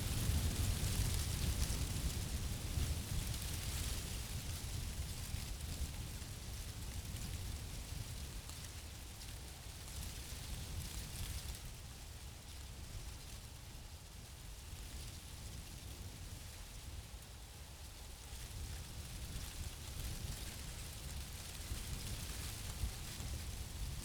Tempelhof, Berlin, Deutschland - wind in oak tree
Berlin Tempelhof airfield, small oak tree in strong wind, dry leaves rattling
(SD702, DPA4060)
Berlin, Germany, 8 February 2014, 13:50